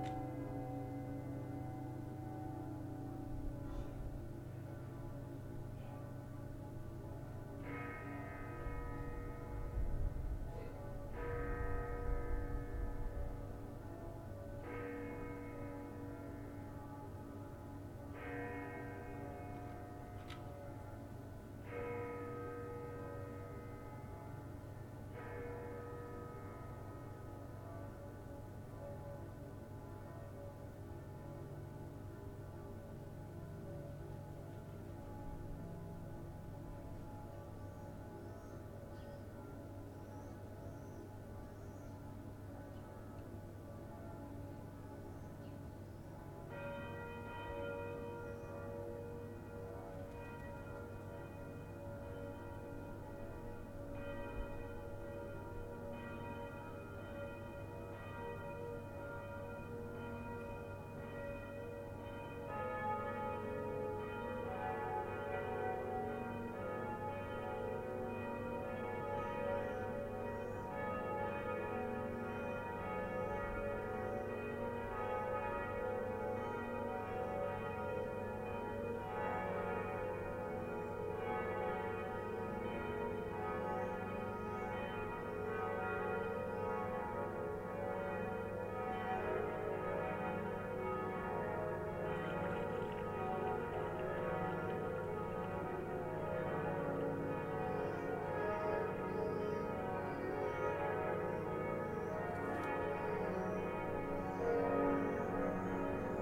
Close to place Gutenberg, Strasbourg, France - Cathedral and churches bells
Strasbourg cathedral's bell and several other churches ringing together everyday at 6pm during the lockdown caused by the coronavirus crisis.
Recorded from the window.
We can also hear some pigeons flying or walking on the roof.
Gear : Zoom H5.